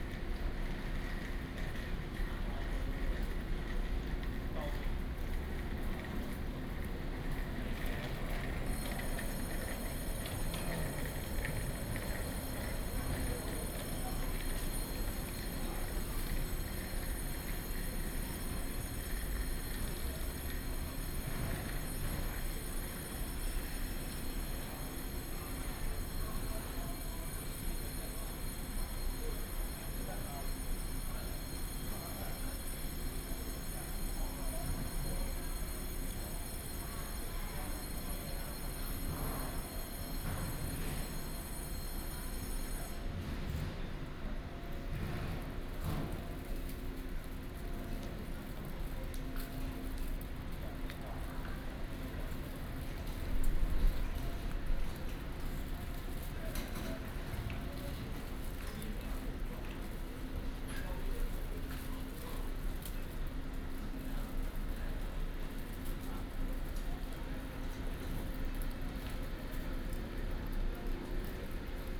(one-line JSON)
{"title": "Zhongli Station, Taoyuan City - At the station platform", "date": "2017-02-07 17:39:00", "description": "At the station platform, The train arrives and departs", "latitude": "24.95", "longitude": "121.23", "altitude": "139", "timezone": "GMT+1"}